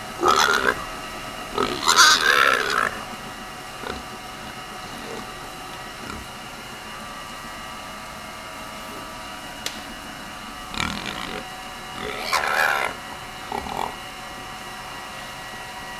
Fangyuan Township, Changhua County - in the Pig workshop
in the Pig workshop, Dogs barking, Feed delivery piping voice, Zoom H6
Changhua County, Taiwan, 4 January 2014